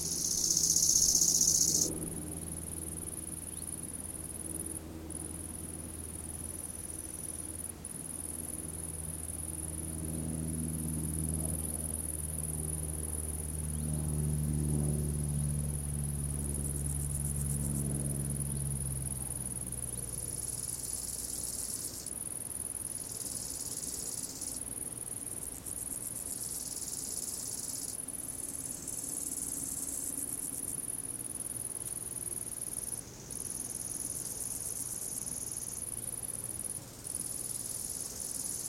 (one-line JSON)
{"title": "Erlangen, Deutschland - grasshoppers", "date": "2012-08-31 16:13:00", "description": "sunny afternoon, grasshoppers - olympus ls-5", "latitude": "49.60", "longitude": "10.95", "altitude": "294", "timezone": "Europe/Berlin"}